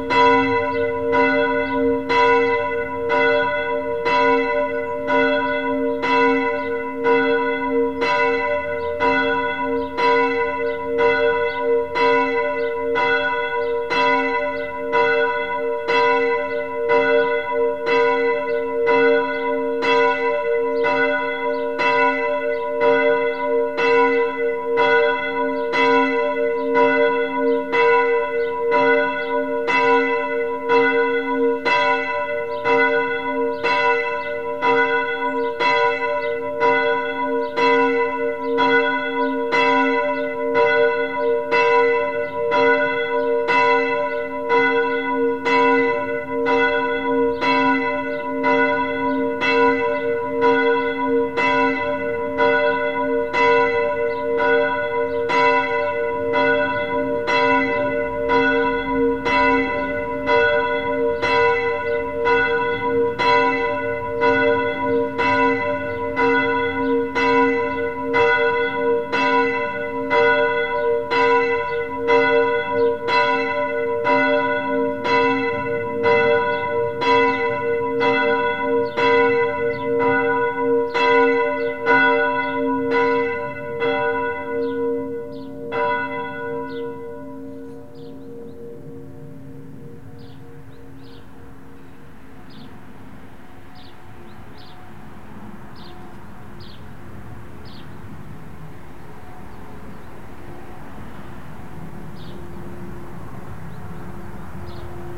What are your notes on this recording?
Katholische Kirche Sankt Antonius, Geläut 18:00 Uhr, Zoom H4n